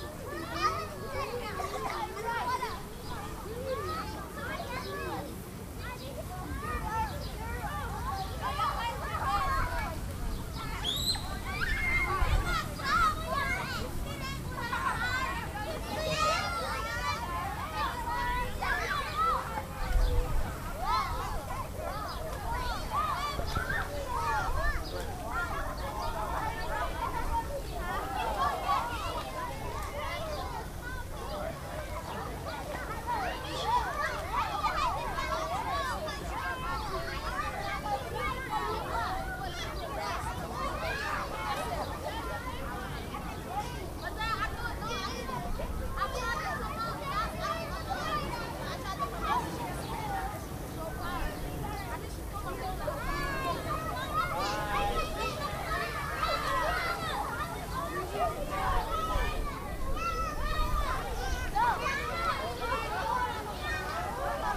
Brooklyn Pool
Public Pool Brooklyn, NY (Fort Greene USA 94 degrees farenheit
Brooklyn, NY, USA, 19 July 2010, ~00:00